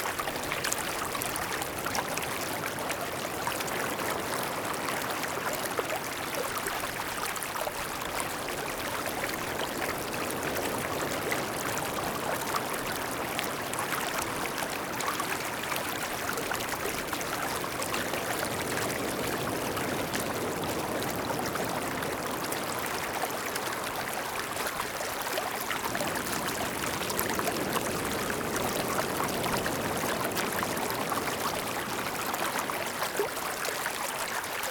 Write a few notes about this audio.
Sound of the waves, The sound of water, Zoom H6 M/S